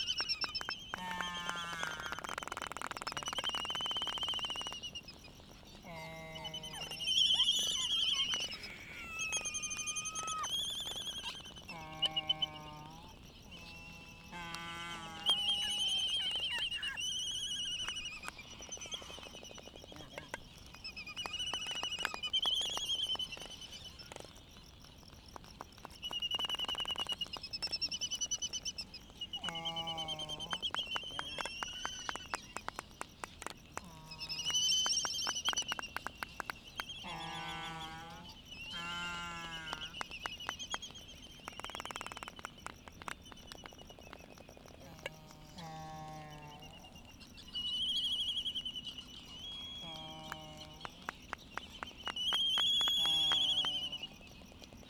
Laysan albatross dancing ... Sand Island ... Midway Atoll ... sky moos ... whinnies ... yaps ... whistles ... whinnies ... the full sounds of associated display ... lavalier mics either side of a furry table tennis bat used as a baffle ... calls from bonin petrels ... warm with a slight breeze ...
United States, 14 March 2012, 04:38